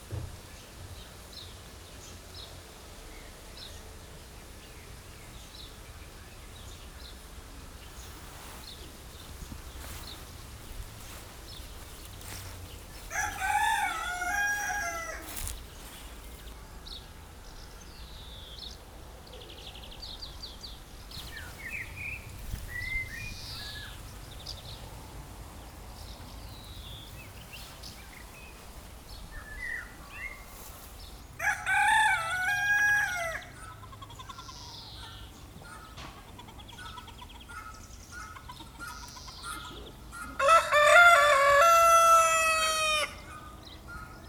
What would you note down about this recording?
In Aizier near the Seine river, there's a garden where poultry is shouting unbridled ! This bucolic place is rural and it's relaxing.